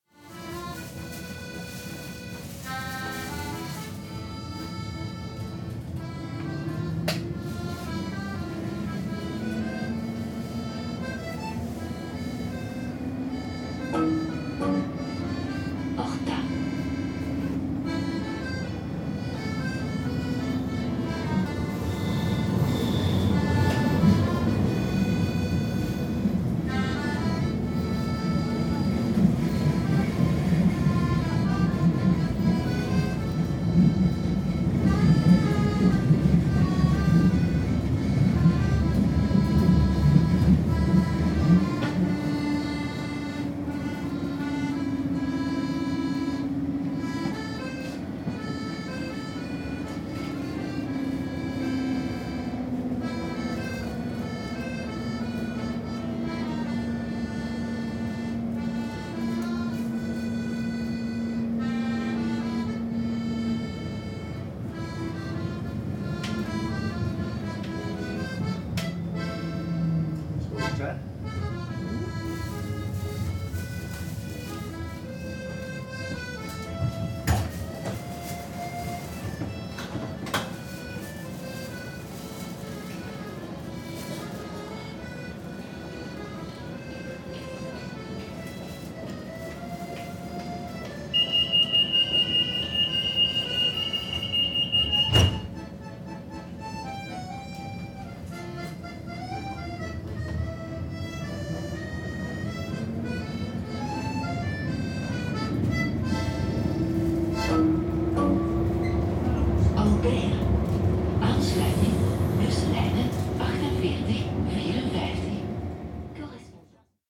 Saint-Gilles, Belgium - Accordionist busking on the tram on the way to Horta and Albert
On the tram between Hallepoort, Horta and Albert, a busker playing his accordion. Recorded simply with EDIROL R-09.
Région de Bruxelles-Capitale - Brussels Hoofdstedelijk Gewest, België - Belgique - Belgien, European Union, June 2013